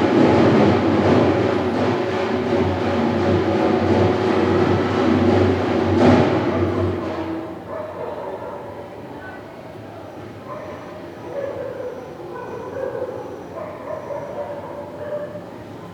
Rishikesh, Indoa, North Indian Wedding
night time, just make some noise
Rishikesh, Uttarakhand, India